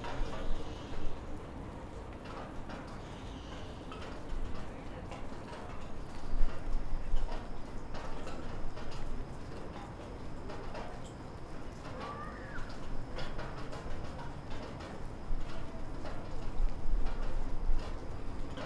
{"title": "ул. 50-летия ВЛКСМ, Челябинск, Челябинская обл., Россия - Chelyabinsk, Russia, evening, passers-by walking in the snow, passing cars", "date": "2020-02-20 18:50:00", "description": "Chelyabinsk, Russia, evening, passers-by walking in the snow, passing cars\nrecorded Zoom F1 + XYH-6", "latitude": "55.24", "longitude": "61.39", "altitude": "224", "timezone": "Asia/Yekaterinburg"}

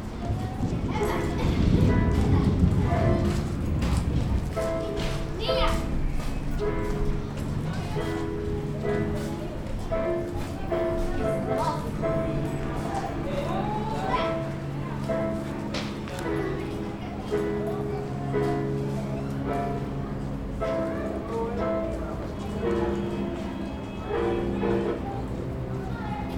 {"title": "Quibdo, Colombia - de la paz", "date": "2008-11-01 21:29:00", "description": "Piano in the barrio de la paz", "latitude": "5.69", "longitude": "-76.66", "altitude": "34", "timezone": "America/Bogota"}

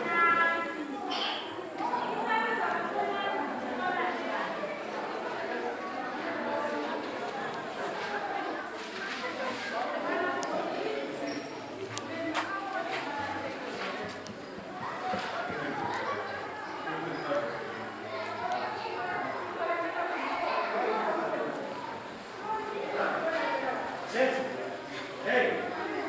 {"title": "Siachilaba Primary School, Binga, Zimbabwe - in between lessons...", "date": "2012-11-07 09:20:00", "description": "… walking in between the class rooms of Siachilaba Primary School in Binga", "latitude": "-17.90", "longitude": "27.28", "altitude": "523", "timezone": "Africa/Harare"}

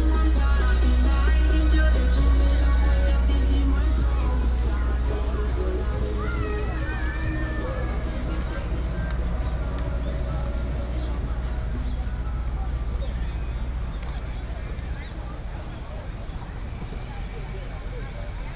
{"title": "樹林頭觀光夜市, Hsinchu City - Walking in the night market", "date": "2017-09-27 17:53:00", "description": "Walking in the night market, Binaural recordings, Sony PCM D100+ Soundman OKM II", "latitude": "24.82", "longitude": "120.96", "altitude": "16", "timezone": "Asia/Taipei"}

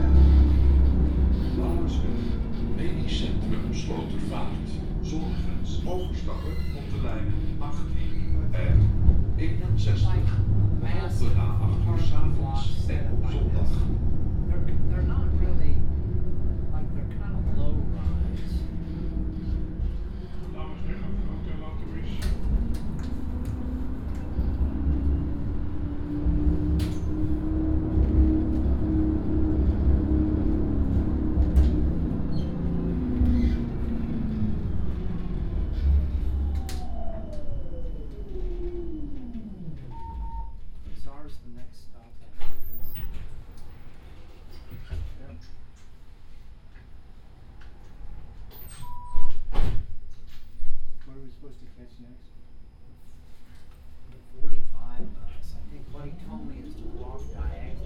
{"title": "Louwesweg, Amsterdam, Netherlands - (292 BI) Tram ride", "date": "2017-09-14 17:45:00", "description": "Binaural recording of a tram ride towards Nieuw Sloten.\nRecorded with Soundman OKM on Sony PCM D100", "latitude": "52.35", "longitude": "4.83", "timezone": "Europe/Amsterdam"}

